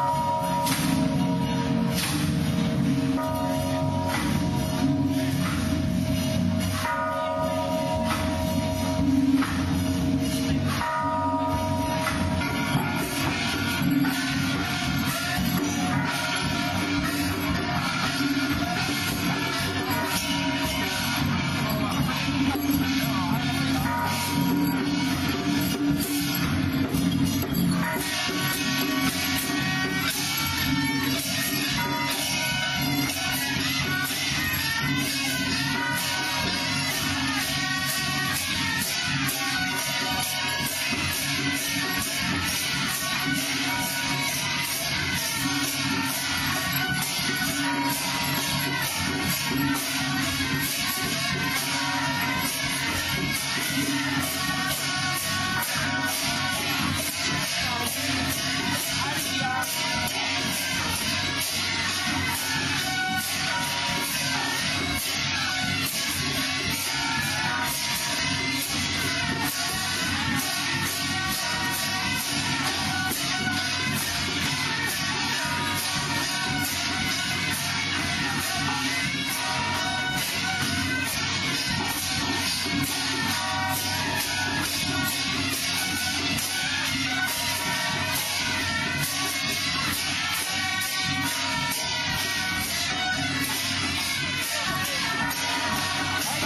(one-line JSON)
{"title": "Xianse Temple, Sanchong District - Traditional temple festivals", "date": "2008-06-14 15:40:00", "description": "Traditional temple festivals, Sony ECM-MS907, Sony Hi-MD MZ-RH1", "latitude": "25.05", "longitude": "121.48", "altitude": "6", "timezone": "Asia/Taipei"}